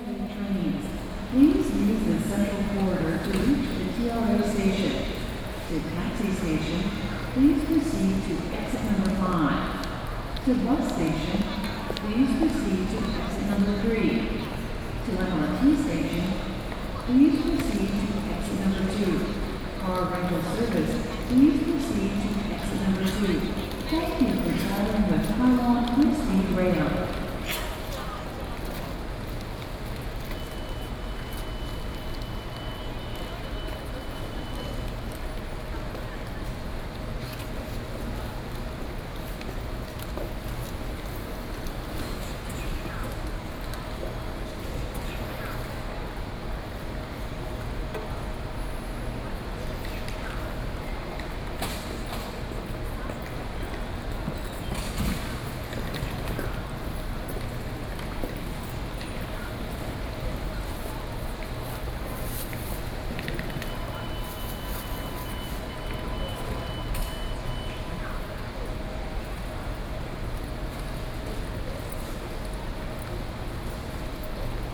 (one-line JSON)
{"title": "Zuoying, Kaohsiung - Station hall", "date": "2012-05-21 21:11:00", "description": "Taiwan high-speed rail station hall, Broadcast messages, Sony PCM D50 + Soundman OKM II", "latitude": "22.69", "longitude": "120.31", "altitude": "12", "timezone": "Asia/Taipei"}